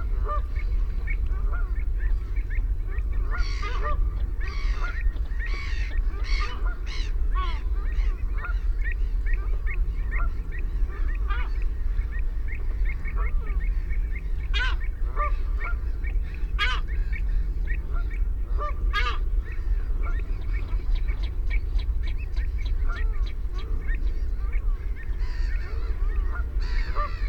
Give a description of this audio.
Belpers Lagoon soundscape ... RSPB Havergate Island ... fixed parabolic to minidisk ... birds calls from ... herring gull ... black-headed gull ... canada goose ... ringed plover ... avocet ... redshank ... oystercatcher ... shelduck ... background noise from shipping and planes ...